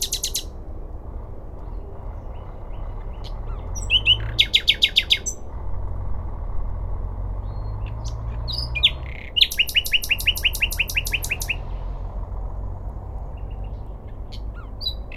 Přírodní park Hostivař-Záběhlice Praha, Česká republika - One or two midnight nightingales and one or two busses.
A midnight song of one, later two (or more?) nightingales mixes with late night public transportation in an rather absurd but quite typical suburban soundscape. I lived there for several years and liked that mood.wwwOsoundzooOcz
Prague-Prague, Czech Republic, 27 April 2007, 00:22